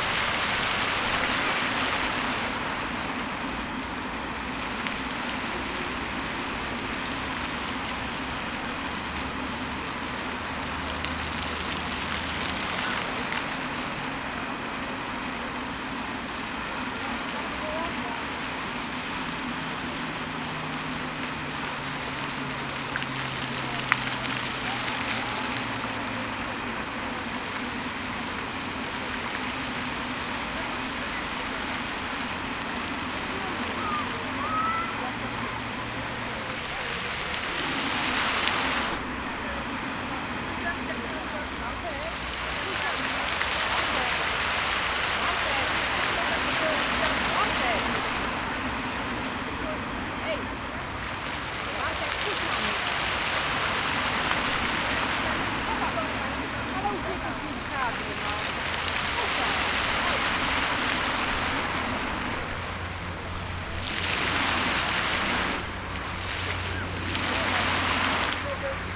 Skwer 1 Dywizji Pancernej WP, Warszawa, Pologne - Multimedialne Park Fontann (b)
Multimedialne Park Fontann (b), Warszawa